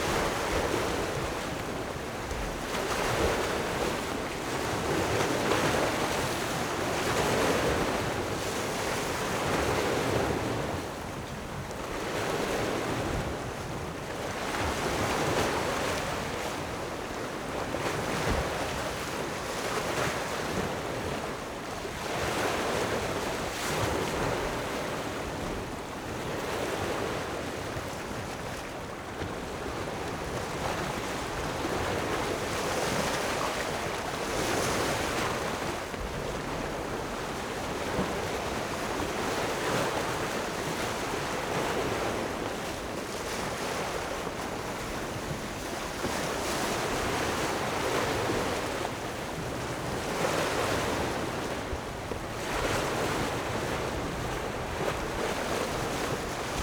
井垵海堤, Magong City - the waves
On the coast, Clipping block
Zoom H6 + Rode NT4